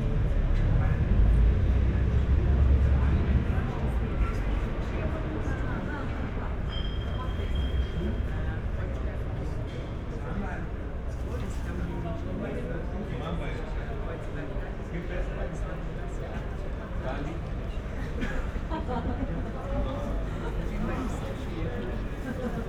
berlin: kottbusser tor - the city, the country & me: balcony nearby café kotti
night ambience
the city, the country & me: may 16, 2013
Berlin, Germany